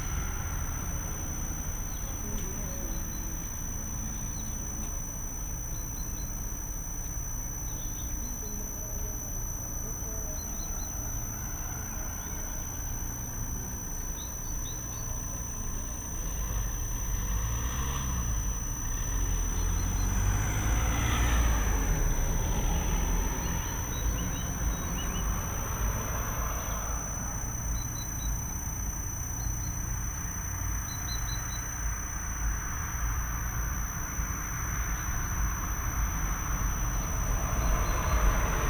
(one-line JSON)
{"title": "Utena, Lithuania, at the street(air conditioner)", "date": "2021-04-29 18:30:00", "description": "standing at the street under some strange sound emitting air conditioner. recorded with sennheiser ambeo headset", "latitude": "55.51", "longitude": "25.61", "altitude": "112", "timezone": "Europe/Vilnius"}